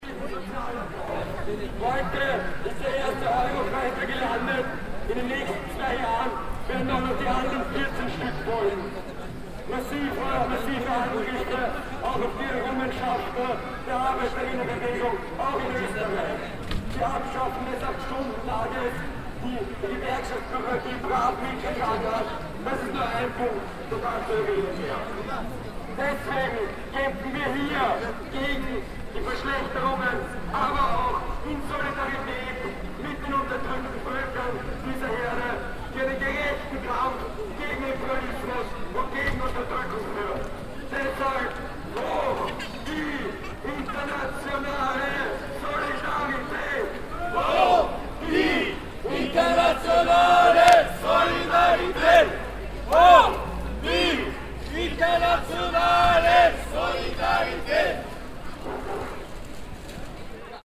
vienna, stephansplatz, solidaritäts kundgebung - wien, stephansplatz, solidaritäts kundgebung
cityscapes, recorded summer 2007, nearfield stereo recordings
international city scapes - social ambiences and topographic field recordings